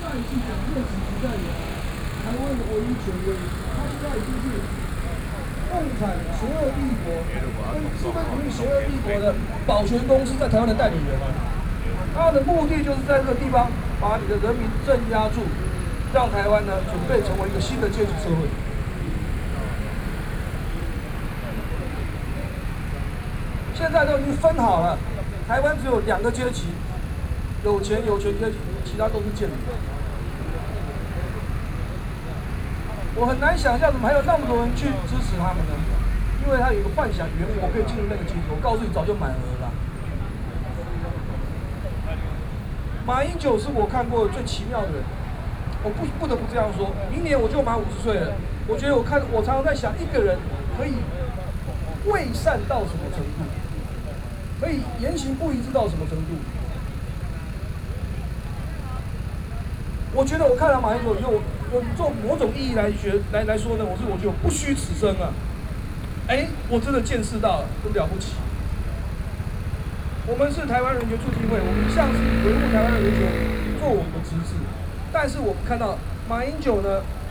Zhongzheng District, Taipei - Protest
Speech, University professors and students gathered to protest, Sony PCM D50+ Soundman OKM II